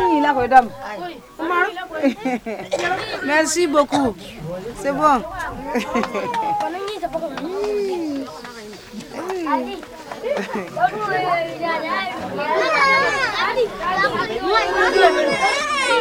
un an aprés le tournage anta une femme entre deux monde retour à dinangourou pour visionner le film
avec anta